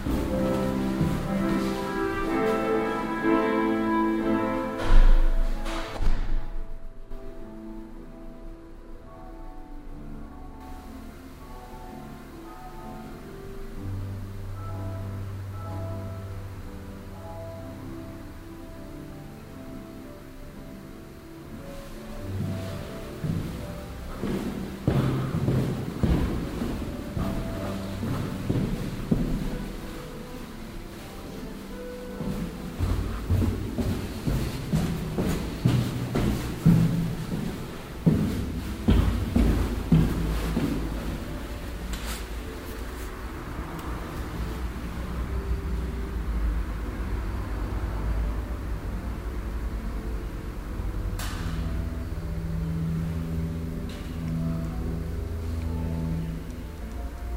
abends in der musikschule, gang durch das gebäude begleitet von verschiedenen musikalischen etitüden und schritten und dem knarzen des flurbodens
project: :resonanzen - neanderland soundmap nrw: social ambiences/ listen to the people - in & outdoor nearfield recordings
musikschule, 19 April, 10:45